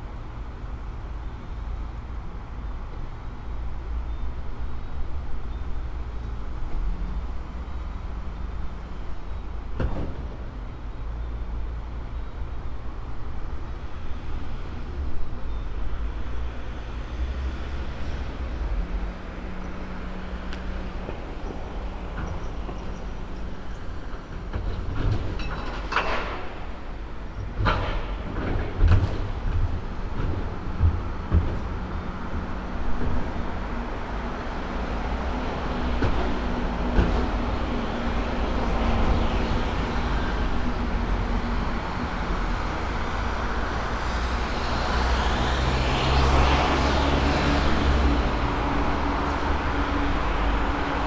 Kaunas, Lithuania, morning town
microphones in the open window of hotel. morning in the town